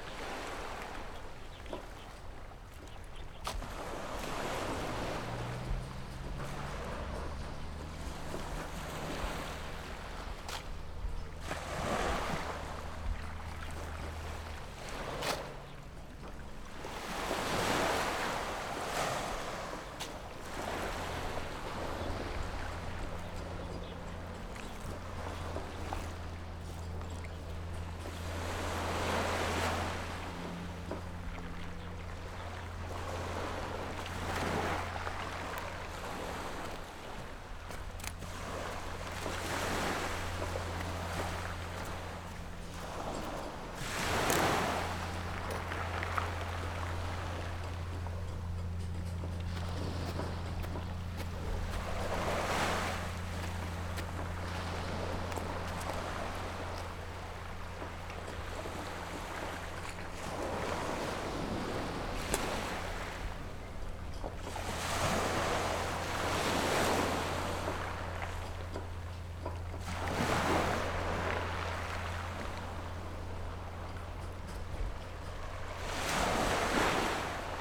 芙蓉澳, Nangan Township - Small pier
Small beach, Small pier, Birds singing, Sound of the waves
Zoom H6+ Rode NT4
連江縣, 福建省, Mainland - Taiwan Border, 14 October 2014